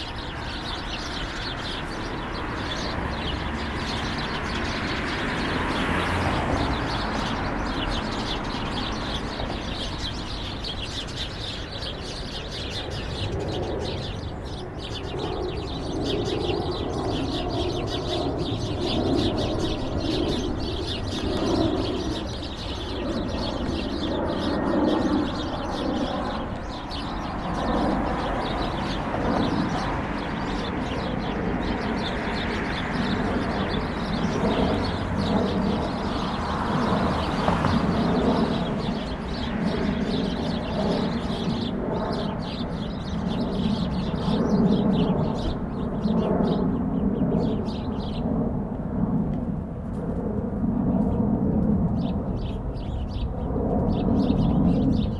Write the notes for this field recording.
Un repère de moineaux dans la végétation grimpante du mur du cinéma "lesToiles du Lac" beaucoup de circulation ici.